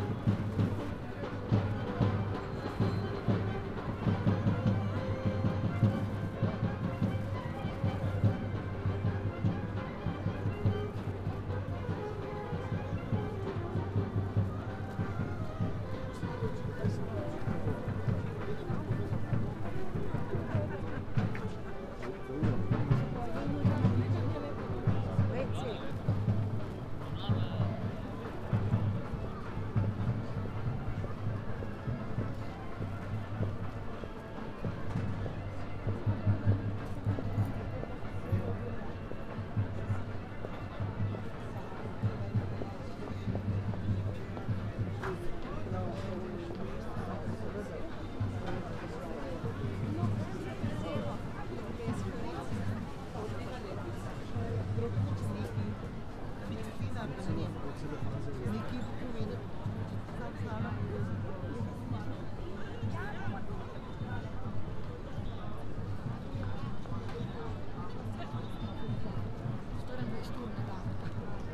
tromostovje, ljubljana - street sounds
a walk from ”triple bridges” to čopova street, street musicians, river ljubljanica almost audible, people passing and talking
Slovenia, 9 December 2013, 19:00